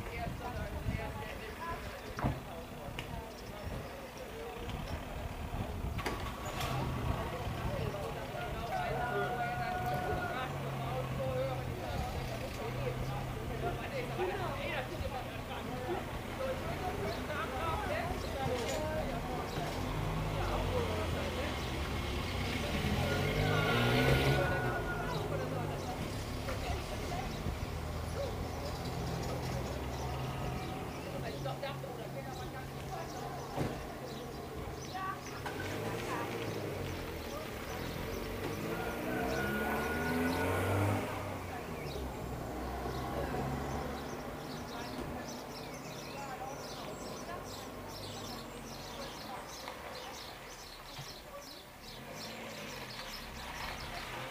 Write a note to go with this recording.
easter morning, a local brassband on tour through the small villages around, playing some trad. tunes. recorded apr 12th, 2009.